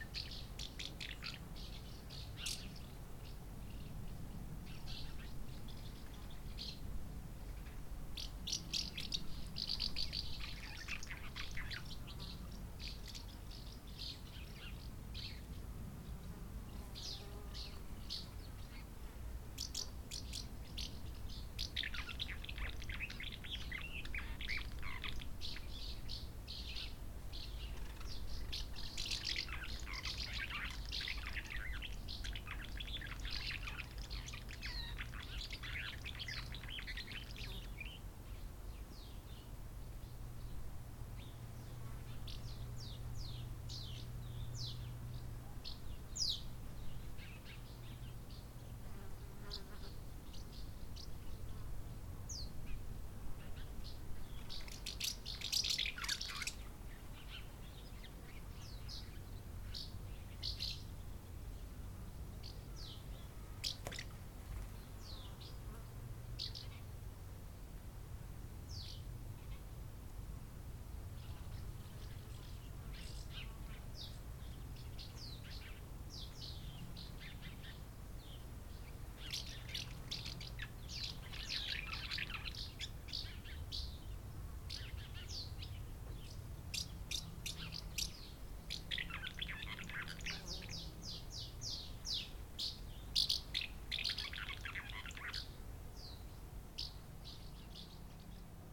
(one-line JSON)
{"title": "Rte de Rocheret, Saint-Offenge, France - nid d'hirondelles", "date": "2020-07-20 17:30:00", "description": "Près d'un nid d'hirondelles sous un toit, c'est la campagne, passage d'un engin agricole.", "latitude": "45.75", "longitude": "6.01", "altitude": "580", "timezone": "Europe/Paris"}